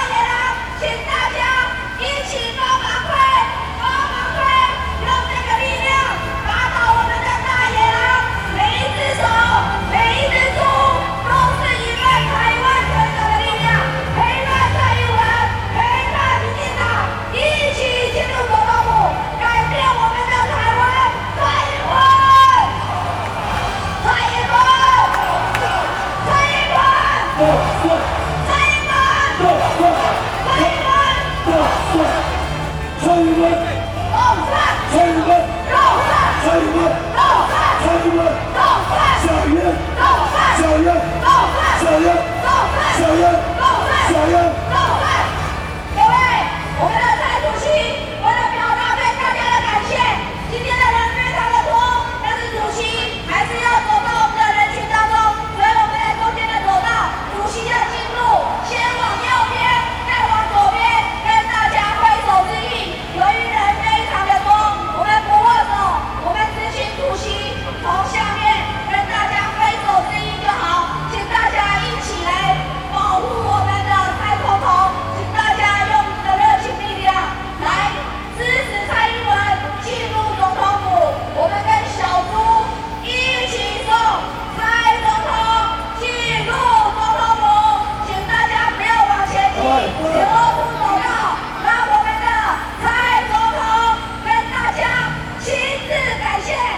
Ketagalan Boulevard, Occasions on Election-related Activities, Rode NT4+Zoom H4n
台北市 (Taipei City), 中華民國, 10 December 2011, 10:37